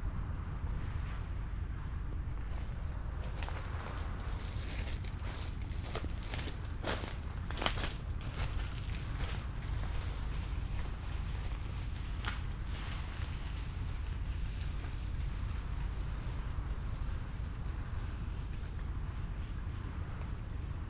Soundscape of the late evening on Caqalai Island (pronounced Thangalai). Off season. About 10 people on the island and them either already asleep or being quiet. Sound of waves from nearby beach. Click and Crack sounds from twigs and branches. Various Insects. The squeaky and croaky calls from the canopy are from Pacific Reef Herons (Egretta sacra) at their night time or high-tide roost. Dummy head microphone placed in an area covered with trees and lush undergrowth. Mic facing south west. Recorded with a Sound Devices 702 field recorder and a modified Crown - SASS setup incorporating two Sennheiser mkh 20 microphones.